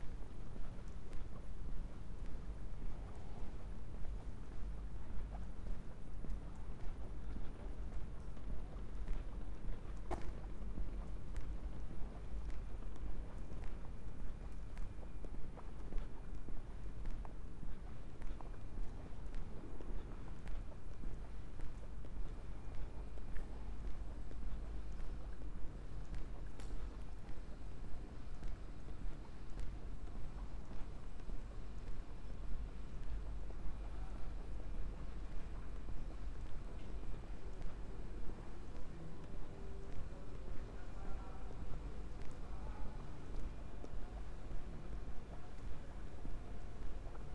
Köln, Cologne, walking from the Dom cathedral to Breite Str. around midnight, church bells, cars, cyclists, homeless people, pedestrians, various sounds from ventilations, billboards etc.
(Sony PCM D50, Primo EM172)